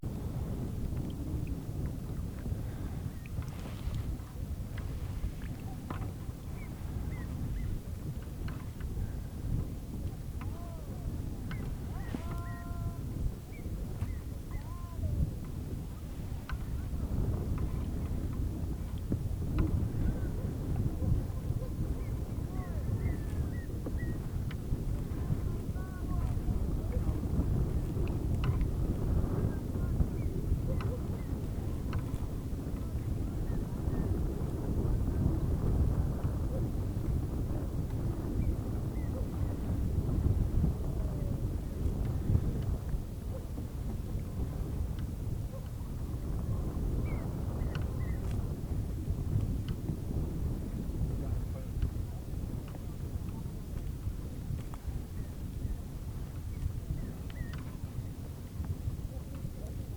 Quilotoa Volcano, Ecuador - Caiaque na cratera do Quilotoa - Kayak in the crater of Quilotoa
Volta de caiaque na cratera do Vulcão Quilotoa, Equador.
Kayak back in the crater of Quilotoa Volcano, Ecuador.
Gravador Tascam DR-05.
Tascam recorder DR-05.
11 April 2019, ~13:00